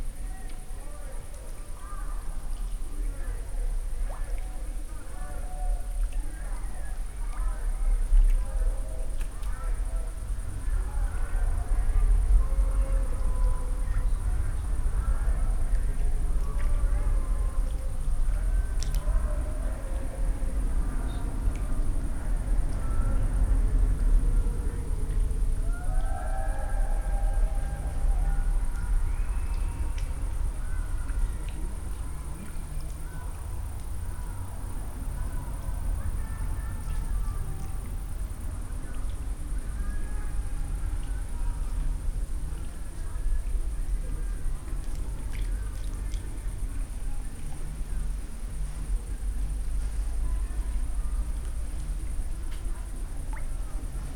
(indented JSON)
{
  "title": "Bredereiche, Fürstenberg/Havel, Deutschland - midnight, at the river Havel",
  "date": "2016-07-02",
  "description": "sounds of jumping fish, a distant party, people talking, church bells\n(Sony PCM D50, Primo EM172)",
  "latitude": "53.14",
  "longitude": "13.24",
  "altitude": "53",
  "timezone": "Europe/Berlin"
}